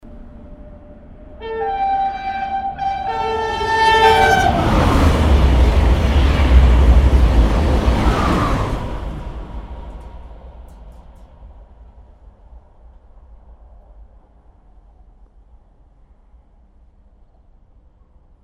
enregistré prés de lautoroute A10